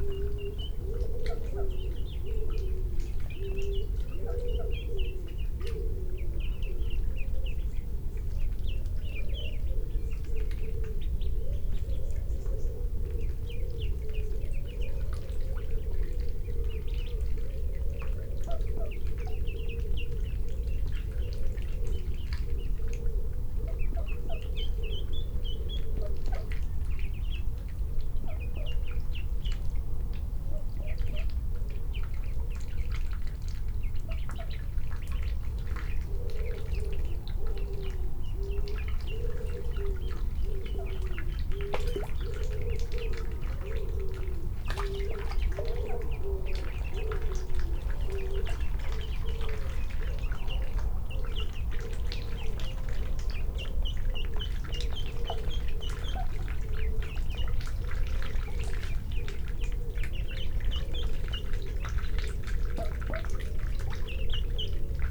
Pergola, Malvern, UK - Ducklings and Muntjac
Just before dawn and part of an overnight recording. In the forground a female mallard and her 6 ducklings vocalise and disturb the pond water. A muntjac calls in the background from the slopes of the Malvern Hills. 2 minutes from the end mice are heard running around the microphones and distant traffic begins the day. This is an attempt to use longer clips to provide an experience of the recording location.
MixPre 6 II with 2 Sennheiser MKH 8020s. The ducks are 10ft away and the muntjac half a mile from the microphones which are on a wooden deck at the edge of the garden pond.
England, United Kingdom, 16 June 2022